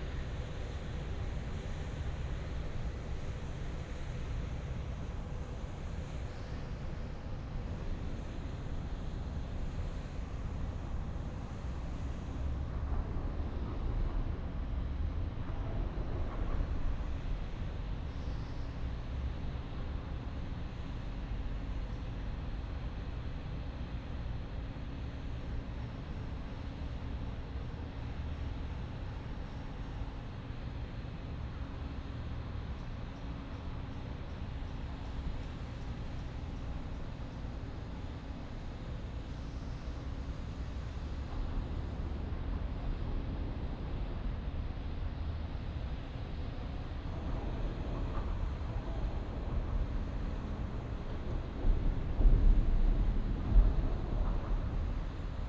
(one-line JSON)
{"title": "Binckhorst Haven, Den Haag - Vent by bridge", "date": "2012-02-28 11:36:00", "description": "Loud airco vent, moving mic. traffic on bridge, quiet harbour ambience. Soundfield Mic (ORTF decode from Bformat) Binckhorst Mapping Project", "latitude": "52.06", "longitude": "4.34", "altitude": "2", "timezone": "Europe/Amsterdam"}